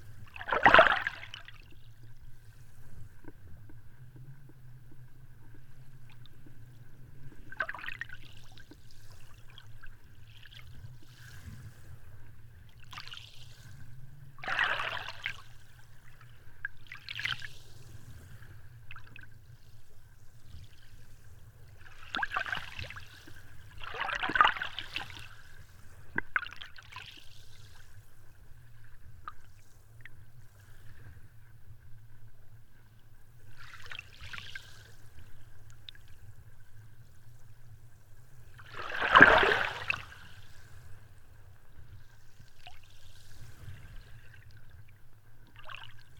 Kolka, Latvia, calm bay waters
calm Riga's bay waters listened through hydrophone